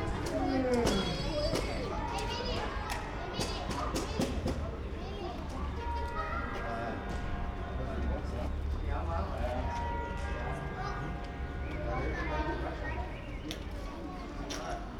Partizanska cesta, Maribor, Slowenia - yard ambience, kids, 6pm bells
kids and parents waiting in front of a house, other kids come & go. 6pm church bells
(Sony PCM D50, Primo EM172)
Maribor, Slovenia, 30 March 2017